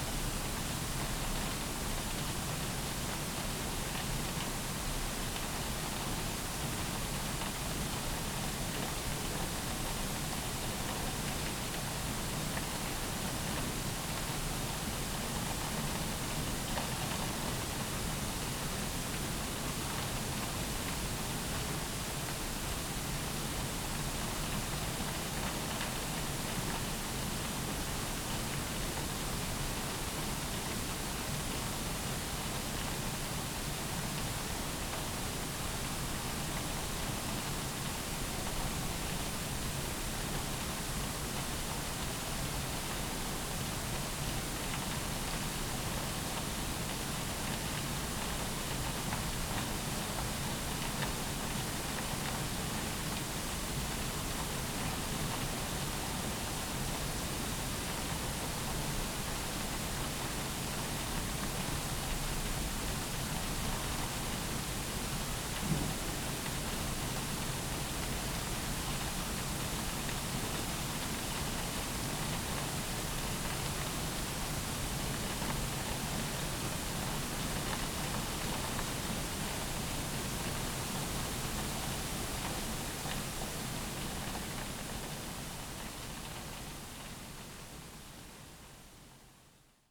Königsheide, Südostallee, Berlin - ground water treatment plant
Königsheide forest, sound of a ground water treatment plant at work
(Sony PCM D50, DPA4060)